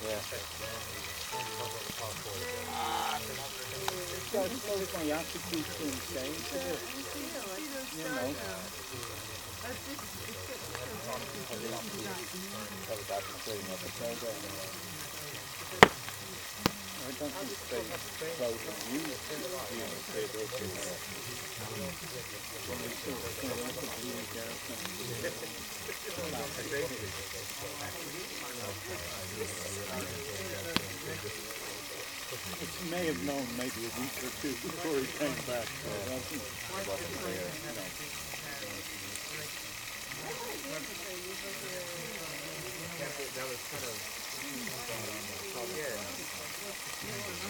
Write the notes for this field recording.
Meat sizzling on the fire, chatter around the fire.